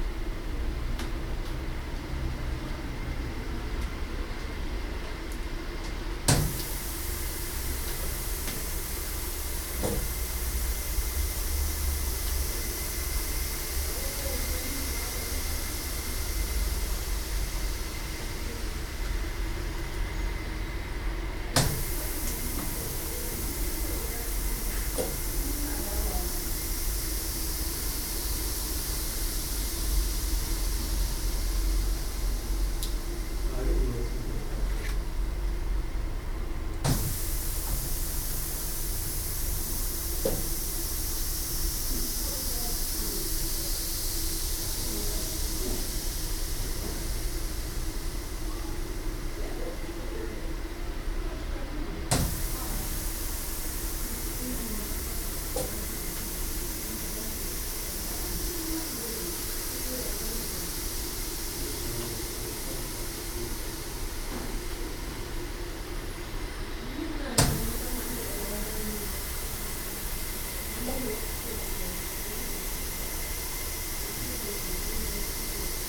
Hortus Botanicus, Leiden.
Hisses and drops of the moisturising system in the Hortus Leiden.
Zoom H2 recorder with SP-TFB-2 binaural microphones.
30 July 2011, 15:25